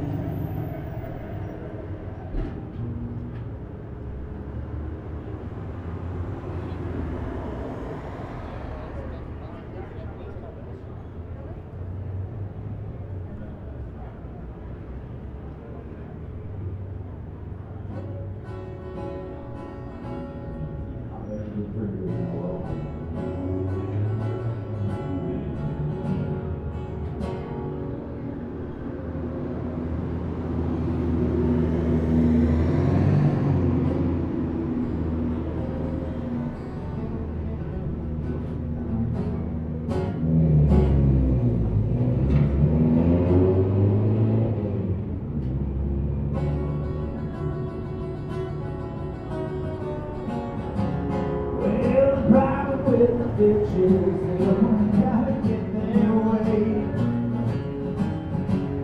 neoscenes: in front of the Drunken Lass
Prescott, AZ, USA, 1 July 2011